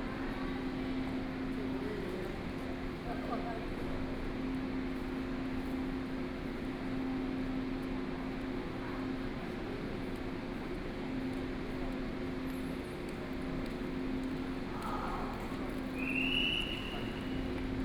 Qidu Station, Keelung City - waiting for the train
Sitting on the station platform waiting for the train, Station broadcast messages, More and more students appear, Binaural recordings, Sony PCM D50+ Soundman OKM II
Keelung City, Taiwan, 7 November, 16:30